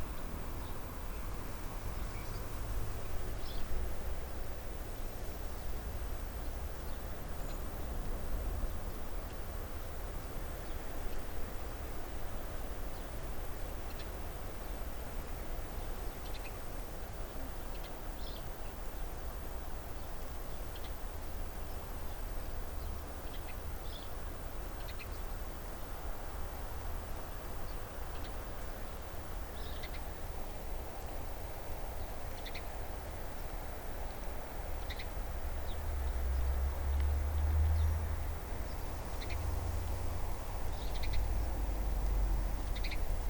Garden at Sunnymeade, Four Crosses, Powys, Wales - Garden Ambience World Listening Day
Quiet background garden ambience with birdsong, wind in trees, light rain falling, cars on nearby road
18 July, 16:04